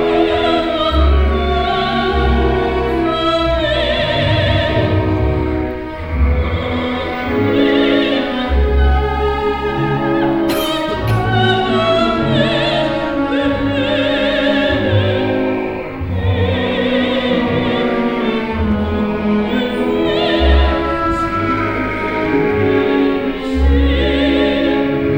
Stadt-Mitte, Düsseldorf, Deutschland - Düsseldorf, opera house, performance
In the auditorium of the "Deutsche Oper am Rhein", during the premiere performance of SehnSuchtMEER by Helmut Oehring. The sound of the orchestra and the voice of David Moss accompanied by the sounds of the audience and the older chairs.
soundmap nrw - topographic field recordings, social ambiences and art places
Deutschland, European Union, 8 March, ~21:00